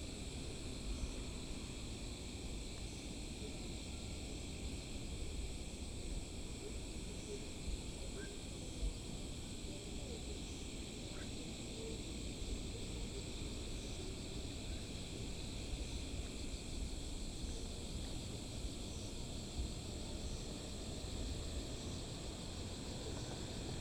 五福橋, 五結鄉利澤村 - Night of farmland
Night of farmland, Traffic Sound, Birds, Frogs
Zoom H6 MS+ Rode NT4